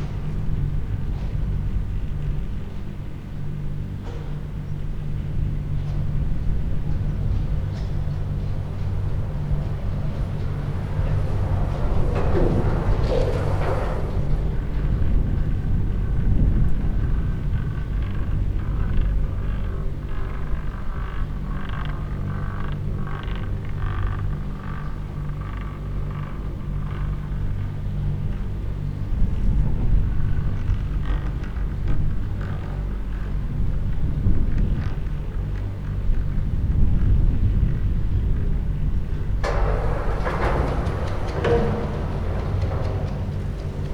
Feldberg - cable car
sounds of moving cable cars arriving and departing the station at the top of the hill. hum of the machinery and moving cables. although recorded on open space it sounds as if was recorded in a big hall.